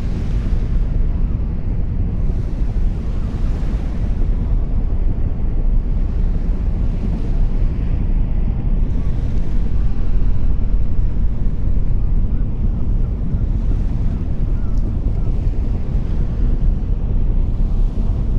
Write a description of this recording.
Helipad, Dunkirk harbour, P&O European Seaway leaving the Charles de Gaulle lock to the left, surf, seagulls and the crane at the floating dock - MOTU traveler Mk3, Rode NT-2A.